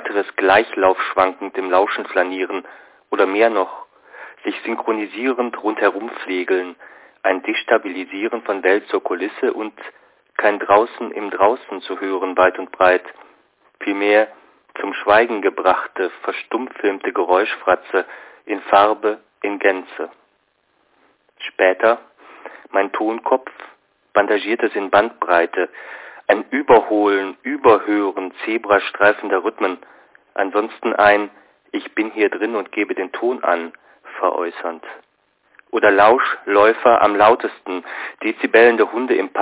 walkmannotizen - kein draußen im draußen zu hören weit und breit - hsch ::: 04.04.2007 18:54:25